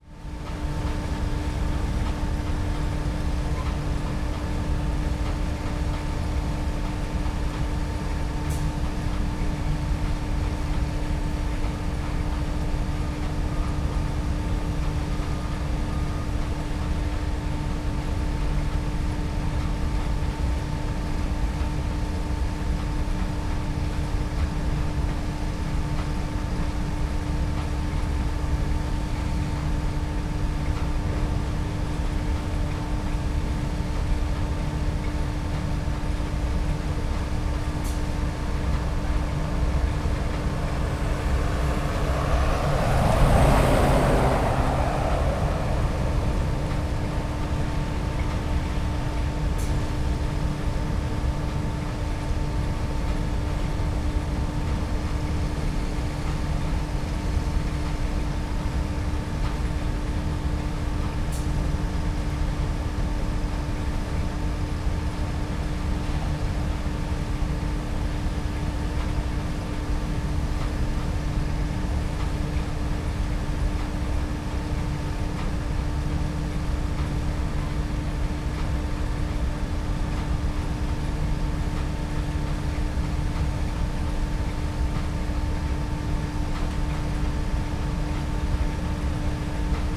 Oldenburg, at the river Hunte, large animal food factory drone
(Sony PCM D50, DPA4060)